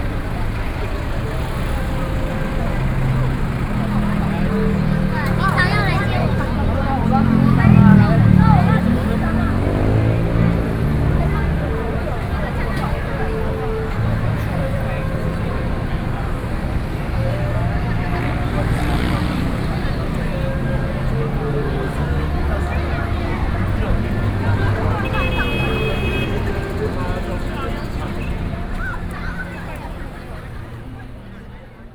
Walking through the small alleys, The crowd, A wide variety of clothing stores and eateries, Binaural recordings, Sony PCM D50 + Soundman OKM II